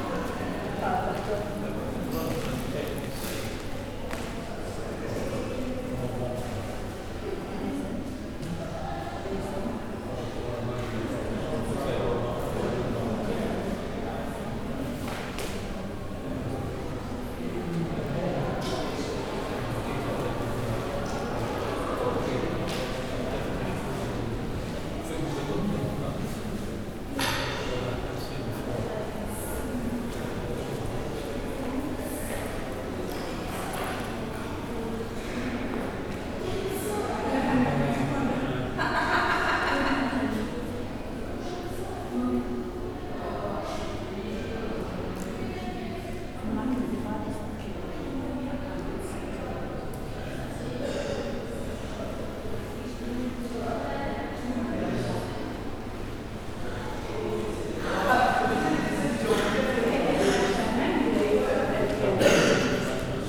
Tate Modern, London, UK - The Rothko Room, part of In The Studio, Tate Modern.
The Rothko Room is usually quiet, but on this occasion there were a number of school groups coming in and out of the room.
Recorded on a Zoom H5.
26 March 2018, 10:20